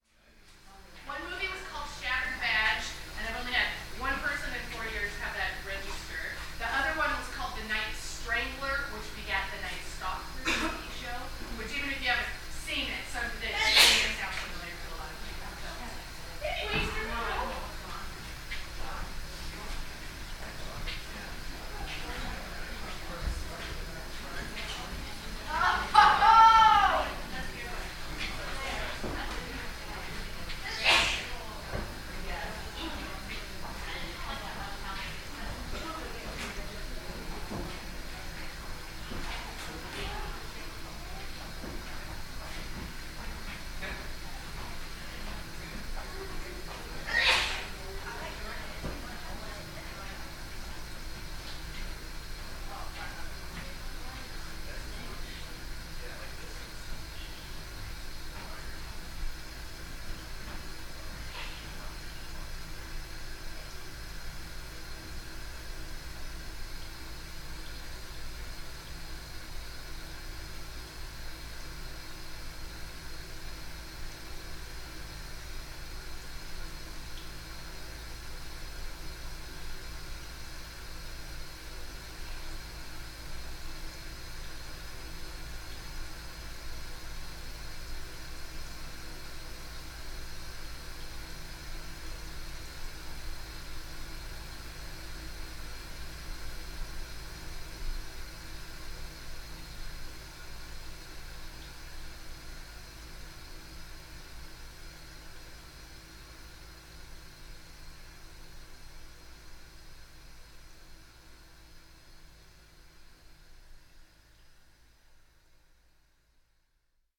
Yesler Way, Seattle, WA, USA - Old Department Store (Underground Tour 1)
Ruins of Dept. Store (south-east corner of space). Sound from water within a five-inch pipe and pump, leading upward into existing business, becomes apparent as tour group leaves. "Bill Speidel's Underground Tour" with tour guide Patti A. Stereo mic (Audio-Technica, AT-822), recorded via Sony MD (MZ-NF810).